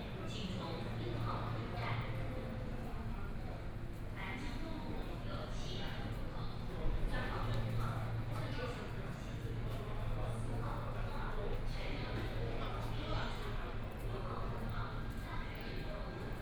East Nanjing Road Station, Shanghai - walking in the Station
From the station platform to lobby, Escalator noise, Messages broadcast station, Out of the station to the station exit direction, Binaural recording, Zoom H6+ Soundman OKM II